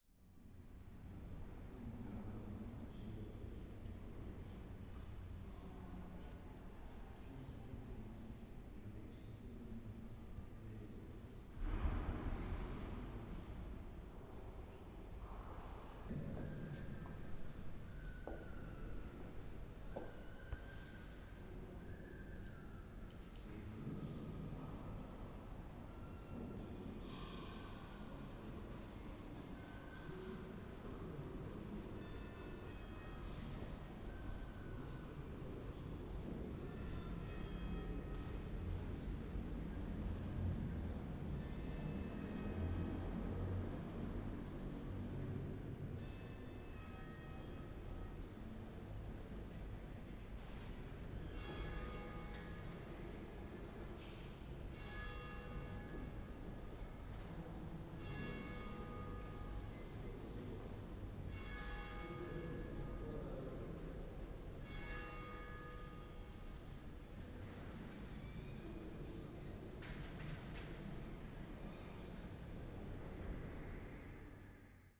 Iglesia Matriz, Montevideo, Uruguay - cinco campanadas
It´s almost five o´clock. Silence in the big hall. Small noises inside the cathedral and then peal of bells.
24 March